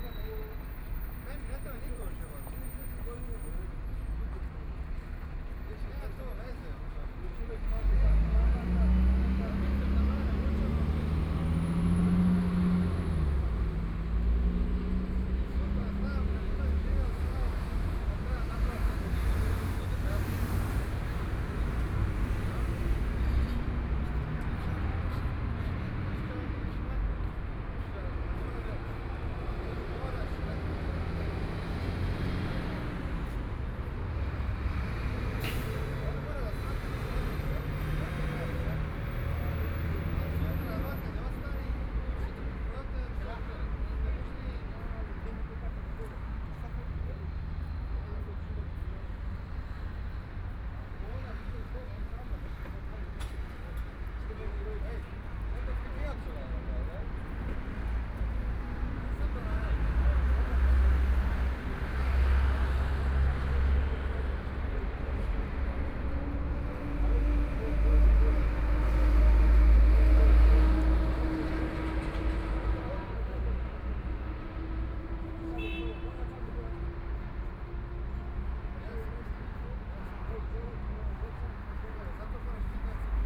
the Bund, Shanghai - the Bund
Walk along the street from the coffee shop inside, Sitting on the street, Traffic Sound, Walking through the streets of many tourists, Bells, Ship's whistle, Binaural recording, Zoom H6+ Soundman OKM II
30 November 2013, 12:31